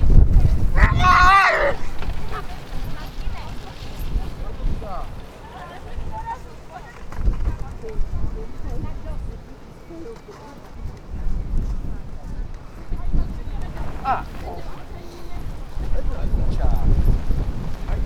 recorded on the top of Sniezka mountain. Hiding behind a small building in order to avoid heavy wind (wasn't able to avoid some clipping and distortion). Tourists walking around, man pulling an upset dog, tiny shards of snow hitting the recorder. (sony d-50)
22 January